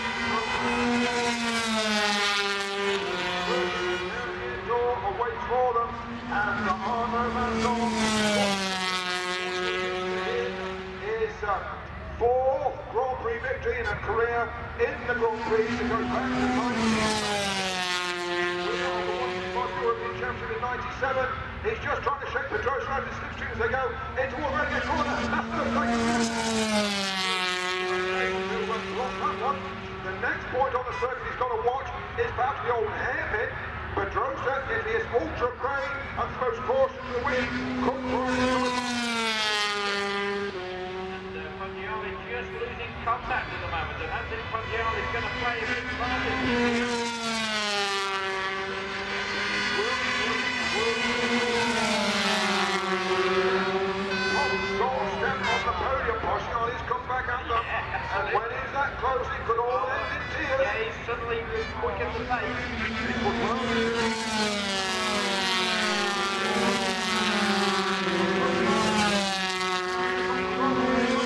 125cc motorcycle race ... part two ... Starkeys ... Donington Park ... the race and associated noise ... Sony ECM 959 one point stereo mic to Sony Minidisk ...